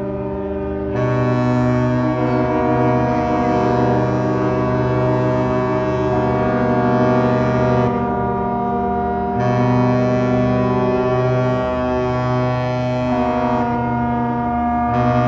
Port of Piraeus - Ships Horns Concert for Epiphany
Ship Horn Tuning.
For the Epiphany, boat make sounds their horn at the same time in the port of Athens, Greece.
Recorded by a AB stereo setup B&K 4006 in Cinela Leonard windscreen
Sound Devices 633 recorder
Recorded on 6th of january 2017 in Pyraeus Port
Αποκεντρωμένη Διοίκηση Αττικής, Ελλάδα